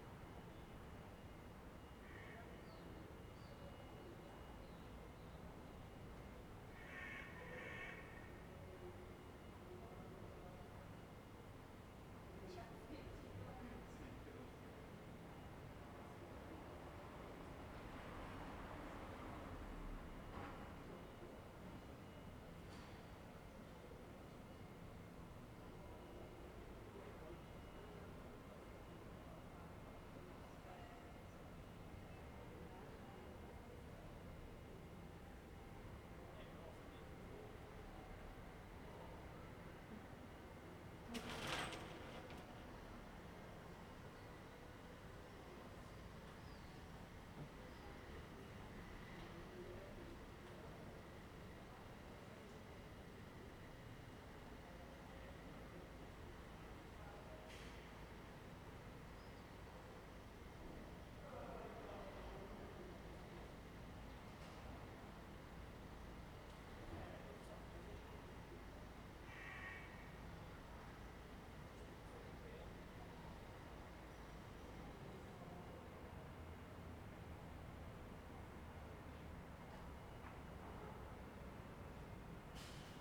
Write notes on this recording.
"Sunny Sunday without students and swallows voices in the time of COVID19" Soundscape, Chapter CXVII of Ascolto il tuo cuore, città, I listen to your heart, city. Sunday, July 12th 2020. Fixed position on an internal terrace at San Salvario district Turin, one hundred-three days after (but day forty-nine of Phase II and day thirty-six of Phase IIB and day thirty of Phase IIC and day 7th of Phase III) of emergency disposition due to the epidemic of COVID19. Start at 6:51 p.m. end at 7:51 p.m. duration of recording 01:00:00. Compare: same position, same kind of recording and similar “sunset time”: n. 50, Sunday April 19th: recording at 5:15 p.m and sunset at 8:18 p.m. n. 100, Sunday June 7th: recording at 6:34 p.m and sunset at 9:12 p.m. n. 110, Sunday June 21st: recording at 6:42 p.m and sunset at 9:20 p.m. n. 117, Sunday July 12th: recording at 6:50 p.m and sunset at 9:18 p.m.